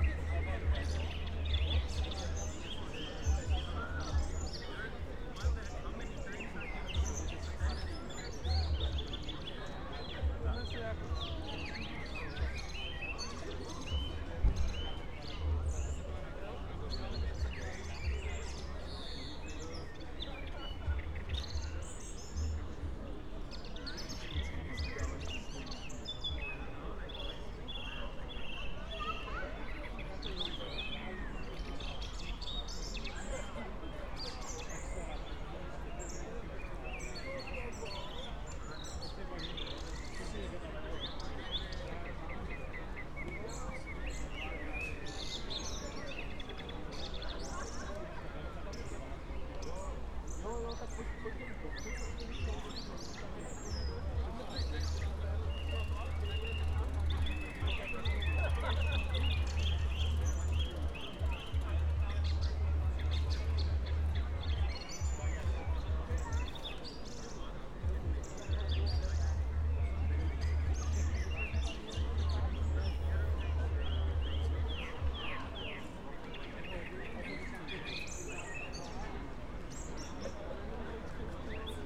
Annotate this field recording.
20:45 Brno, Lužánky, (remote microphone: AOM5024/ IQAudio/ RasPi2)